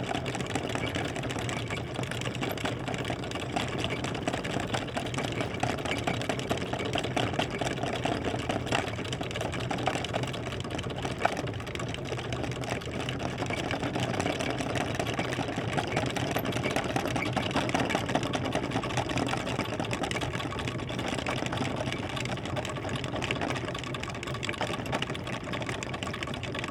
Tempelhofer Feld, Berlin, Deutschland - wind wheel, Almende Kontor
wind is the protagonist on the Tempelhof field. this part, called Almende Kontor, is dedicated to an experimental urban gardening project. even on winter days people sit here and enjoy the sun and sky, or their self build wooden schrebergarten castles... an adventurous wind wheel emsemble rattles in the wind.
(SD702, AT BP4025)
Berlin, Germany, 28 December 2012, 13:30